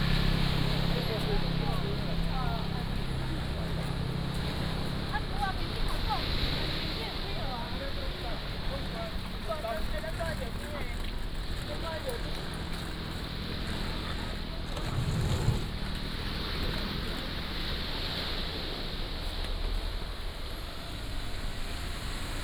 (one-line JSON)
{"title": "橋仔漁村, Beigan Township - Small port", "date": "2014-10-13 16:48:00", "description": "Small port, Sound of the waves, Many tourists", "latitude": "26.24", "longitude": "119.99", "altitude": "14", "timezone": "Asia/Taipei"}